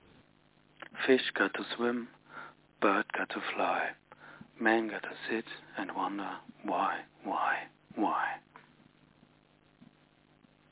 Kurt Vonnegut R.I.P. - Cat's Cradle, K. Vonnegut
Kurt Vonnegut R.I.P.
Germany